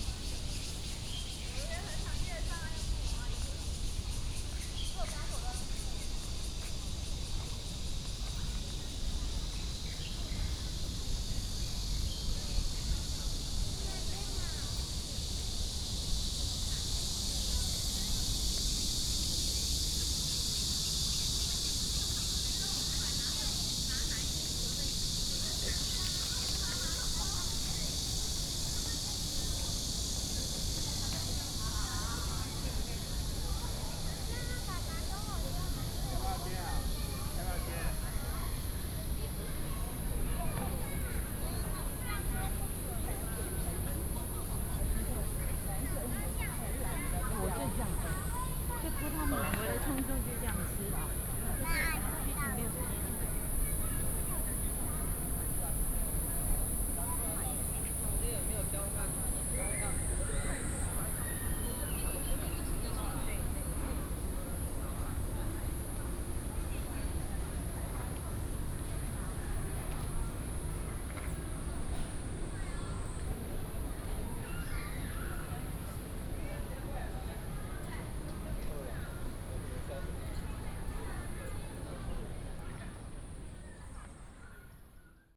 Zhoushan Rd., National Taiwan University - Walking on the road
Cicadas cry, Bird calls, Traffic Sound, Visitor, walking In the university